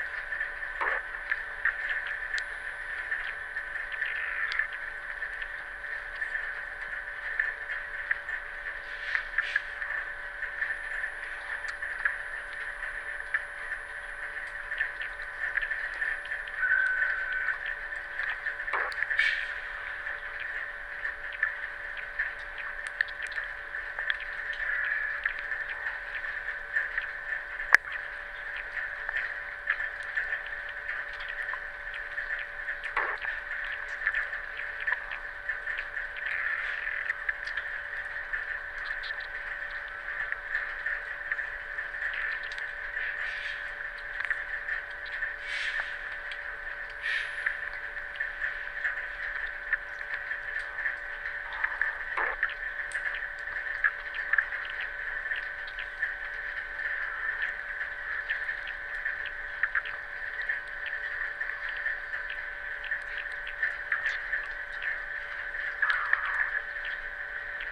Gaarden-Ost, Kiel, Deutschland - Underwater Kiel Harbor, Germany
Kiel harbor, Germany, Underwater recording
Zoom H6 recorder, jrf D-series hydrophone
Some strange 10 kHz hiss but it's not the microphone because it only occurs on these harbor recordings.
29 October, 23:02